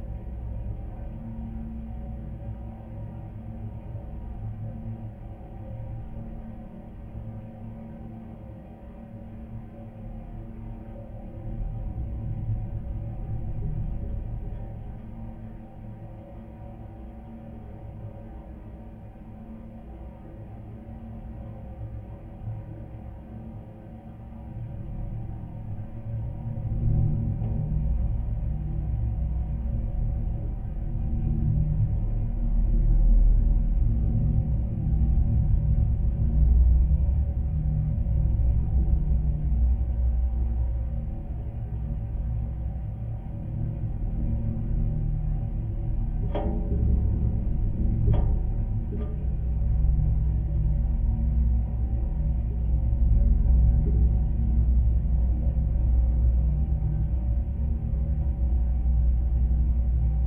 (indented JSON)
{
  "title": "Joneliškės, Lithuania, swaying sign",
  "date": "2020-05-01 14:50:00",
  "description": "LOM geophone on a metallic sign swaying in a wind. low frequencies",
  "latitude": "55.47",
  "longitude": "25.59",
  "altitude": "107",
  "timezone": "Europe/Vilnius"
}